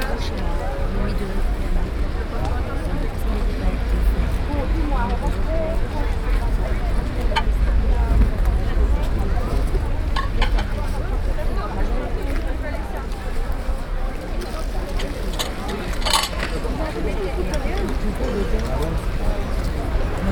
Brussels, Place Van Meenen, Brocante - Flea Market.
Saint-Gilles, Belgium, 22 May 2011, 10:11